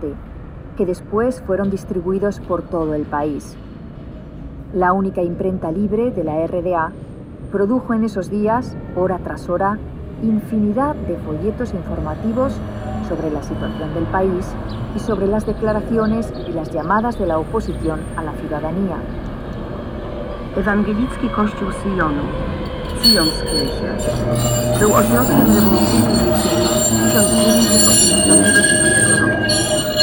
Berlin, Zions church, info terminal - berlin, zions church, info terminal
at the walkway to the church.the sound of an info terminal with several international languages describing the political history of the church.
At the end overwhelmed by the sound of a passing by tram.
soundmap d - social ambiences and topographic field recordings
Berlin, Germany, February 2012